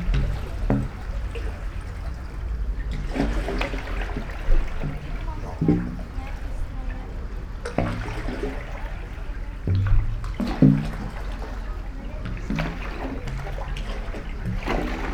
Novigrad, Croatia - three round and two square holes
sounds of sea and night walkers, little owl, walk inside of concrete pool